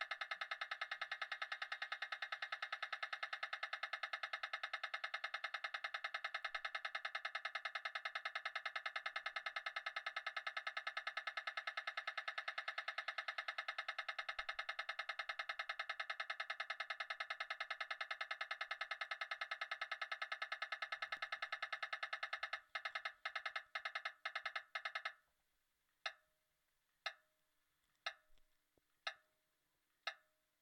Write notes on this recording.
Trafficlights for the blind at the crossing Hofzichtlaan / Margarethaland. Light are sound operated. Recorded with a Tascam DR100 MKlll and 2 contactmicrophones